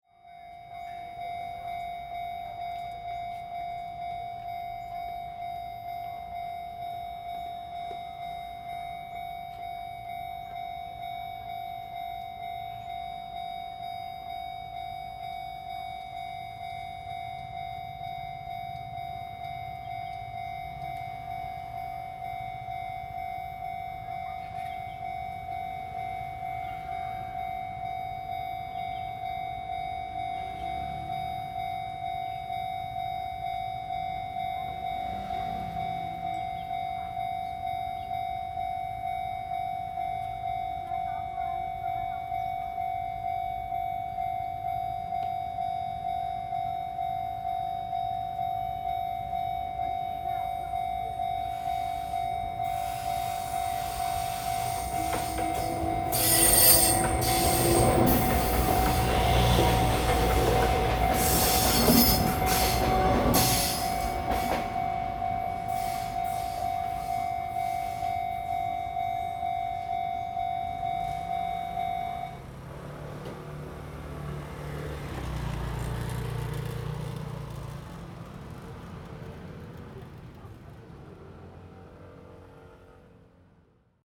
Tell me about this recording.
Railway crossings, Traveling by train, Zoom H2n MS+XY +Sptial Audio